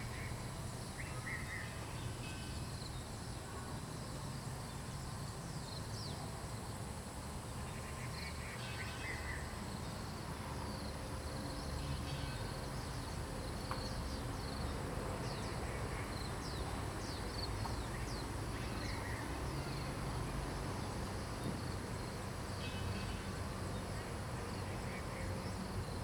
Paper Dome, Taomi, Nantou County - Birds singing
Birds singing, Bell hit, A small village in the evening
Zoom H2n MS+XY